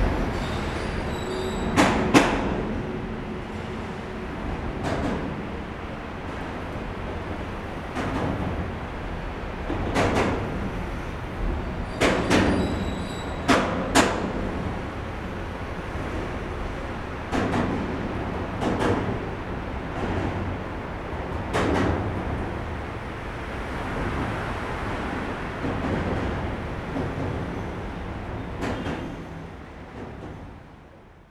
Sanmin District, Kaohsiung - Traffic Noise
In the viaduct below, Vehicle through the noise, Sony ECM-MS907, Sony Hi-MD MZ-RH1
高雄市 (Kaohsiung City), 中華民國, 29 March 2012, ~17:00